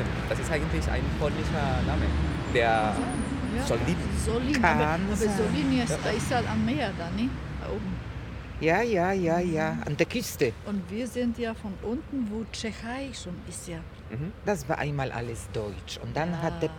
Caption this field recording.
Im Gespräch mit zwei Anwohnerinnen.